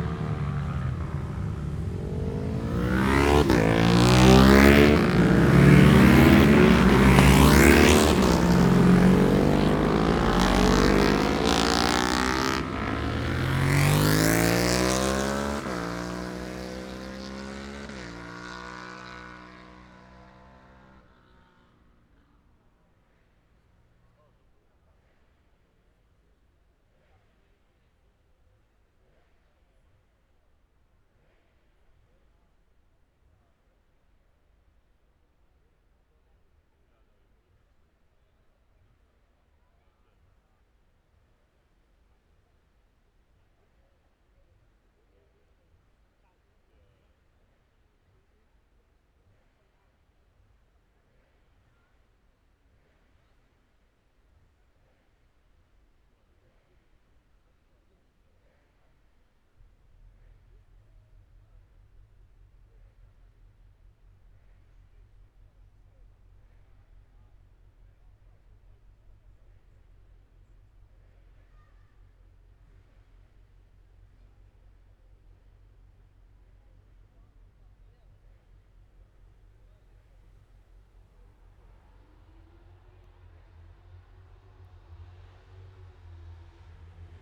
Scarborough District, UK - Motorcycle Road Racing 2016 ... Gold Cup ...
Super lightweights ... 650cc practice ... Mere Hairpin ... Oliver's Mount ... Scarborough ... open lavaliers clipped to base ball cap ...